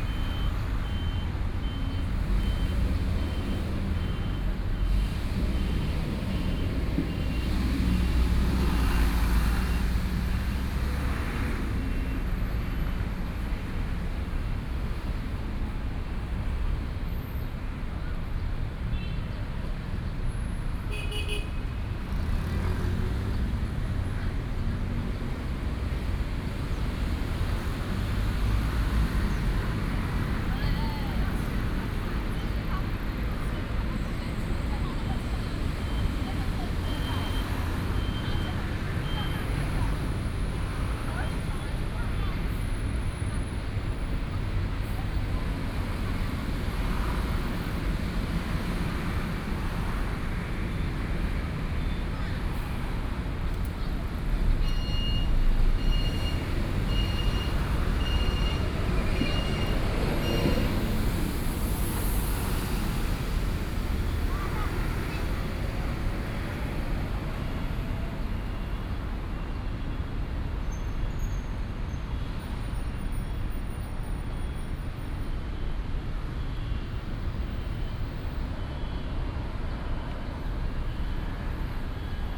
居安公園, Da’an Dist., Taipei City - In the park entrance
End of working hours, Footsteps and Traffic Sound